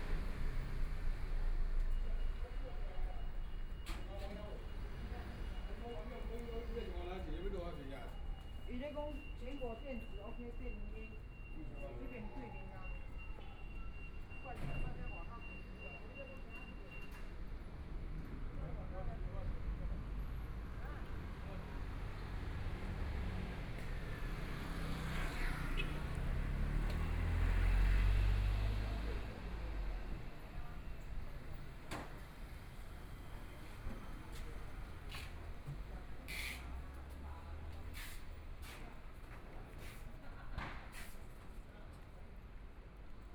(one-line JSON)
{"title": "中山區新喜里, Taipei City - In the Street", "date": "2014-02-15 17:35:00", "description": "walking In the Street, Traffic Sound, Binaural recordings, Zoom H4n+ Soundman OKM II", "latitude": "25.07", "longitude": "121.53", "timezone": "Asia/Taipei"}